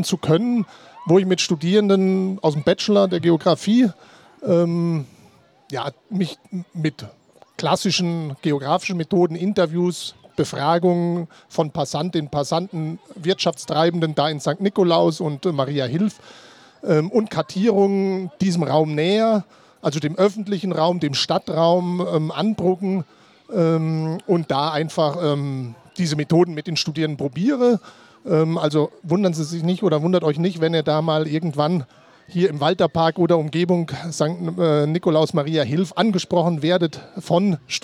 Innstraße, Innsbruck, Österreich - fm vogel Abflug Birdlab Mapping Waltherpark Realities
Canesianum Blasmusikkapelle Mariahilf/St. Nikolaus, vogelweide, waltherpark, st. Nikolaus, mariahilf, innsbruck, stadtpotentiale 2017, bird lab, mapping waltherpark realities, kulturverein vogelweide, fm vogel extrem, abflug birdlab
Innsbruck, Austria, 21 May